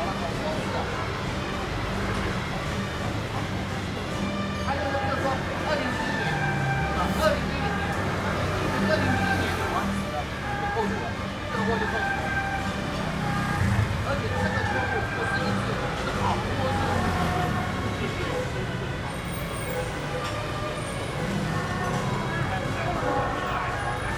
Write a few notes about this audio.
in a small alley, There are nearby temple festivals, traffic sound, Zoom H4n + Rode NT4